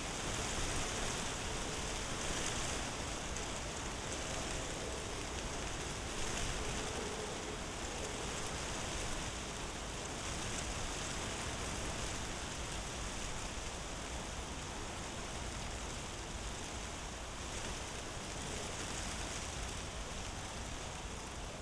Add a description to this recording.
windy Winter day, wind in an small oak tree, dry leaves rattling in the wind, (SD702, Audio Technica BP4025)